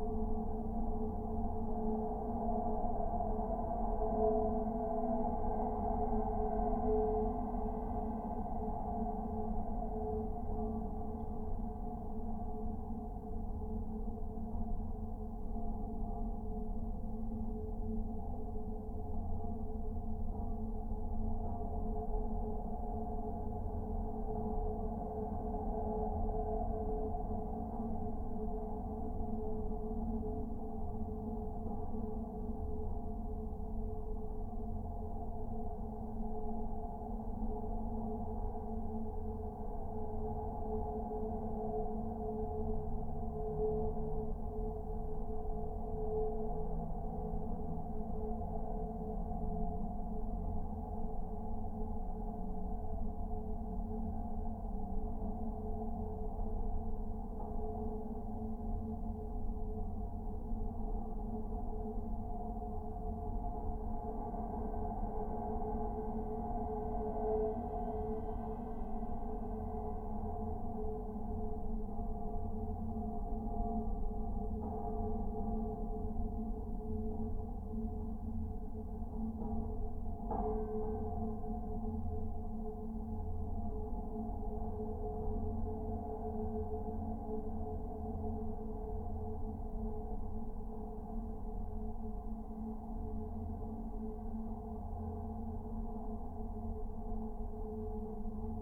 Erasmusbrug, Rotterdam, Netherlands - Erasmusbrug
Recorded with LOM contact mics. Traffic jam makes the bridge vibrate constantly producing low frequencies and harmonics.